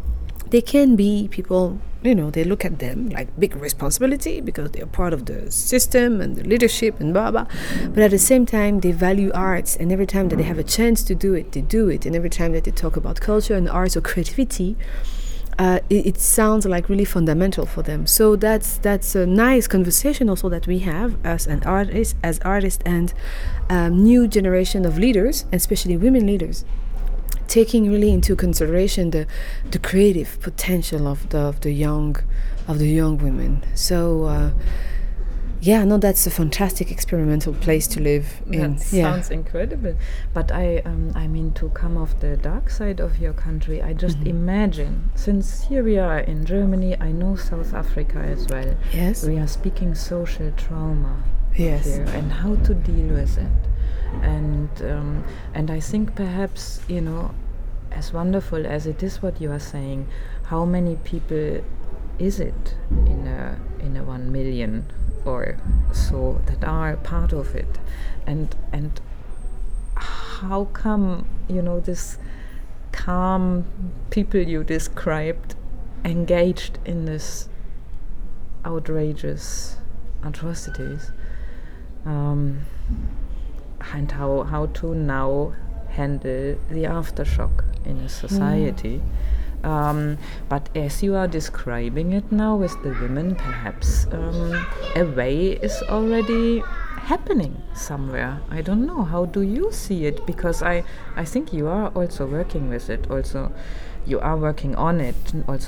City Library, Hamm, Germany - Rwanda is a women’s country …
The noise of the bus terminal outside the library got a bit overwhelming… so we pulled to chairs in a far away corner of the library and continued with our conversation. Here, Carole tells us about the 1994 Genocide in Rwanda from a woman's perspective…
We are with the actor Carole Karemera from Kigali, Rwanda was recorded in Germany, in the city library of Hamm, the Heinrich-von-Kleist-Forum. Carole and her team of actors from the Ishyo Art Centre had come to town for a week as guests of the Helios Children Theatre and the “hellwach” (bright-awake) 6th International Theatre Festival for young audiences.
Carole’s entire footage interview is archived here:
16 June